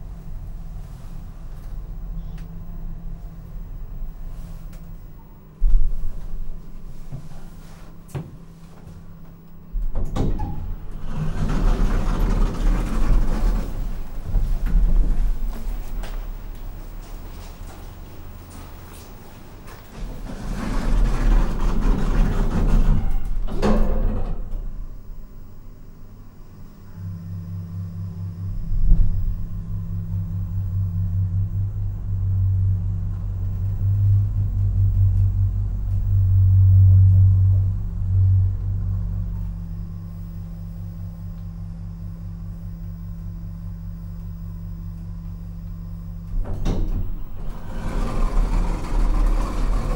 Innenstadt - St. Ulrich-Dom, Augsburg, Germany - Elevator in "Müller" drugstore
7 November 2012, 16:08